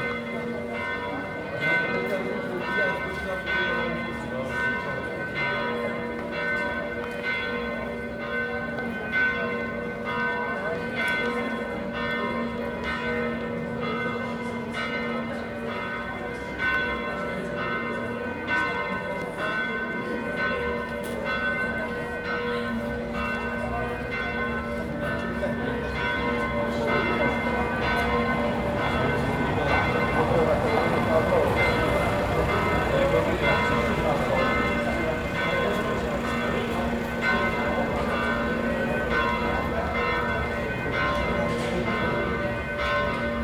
Tyn - Tyn Bells
Bells ringing at 6pm on a Sunday